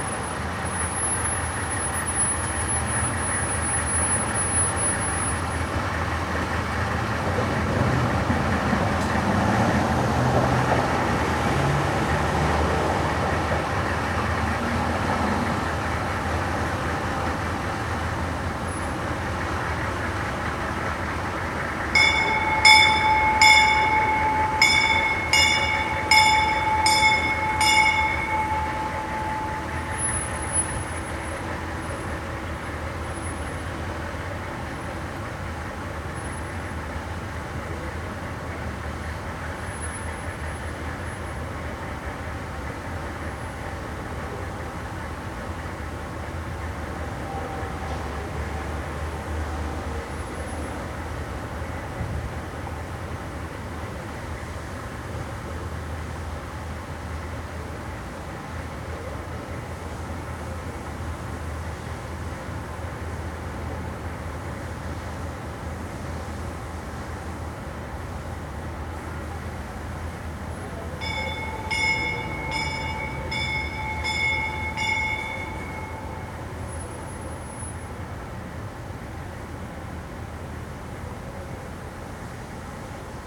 equipment used: Sony Minidisc, Sony stereo mic
Apologies for the wind noise... Still it is an insteresting soundmark.